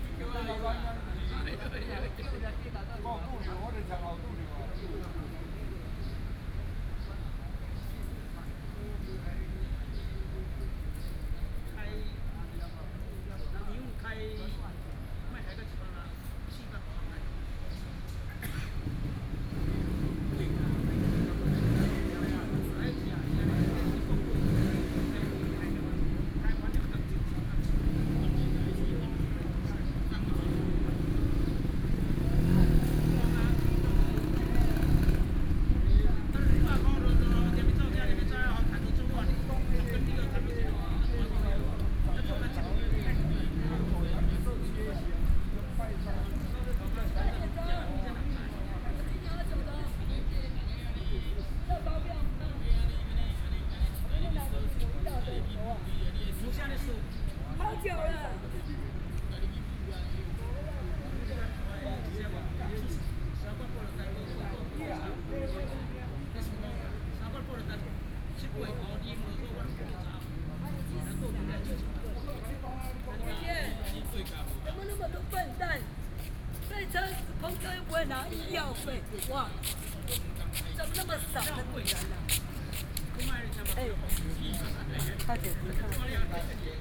Chat with a group of elderly people under the big tree, Sony PCM D50 + Soundman OKM II